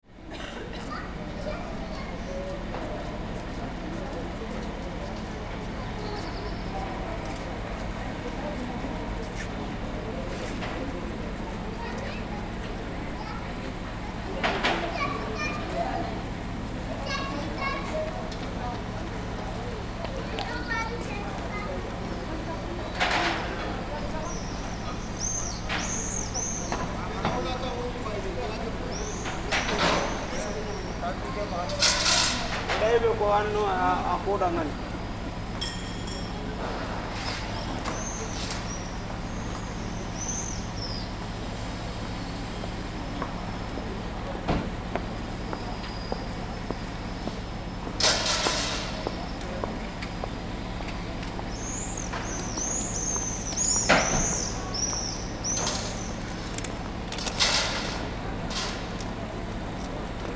This recording is one of a series of recording, mapping the changing soundscape around St Denis (Recorded with the on-board microphones of a Tascam DR-40).
Saint-Denis, France, 25 May 2019, 10:15